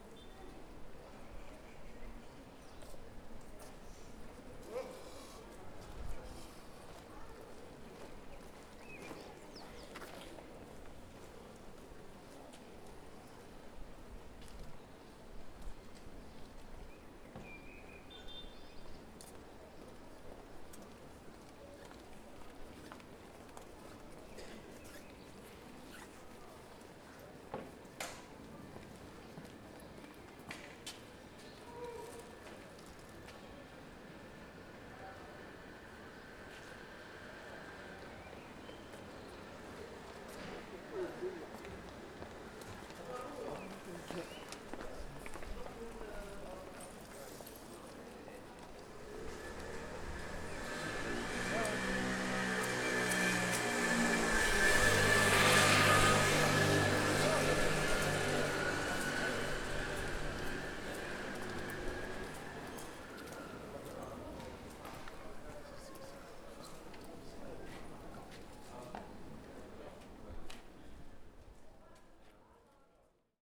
Gabriel Péri / Franklin, Saint-Denis, France - Outside Carribean Restaurant, 32 R. Gerard Péri
This recording is one of a series of recording mapping the changing soundscape of Saint-Denis (Recorded with the internal microphones of a Tascam DR-40).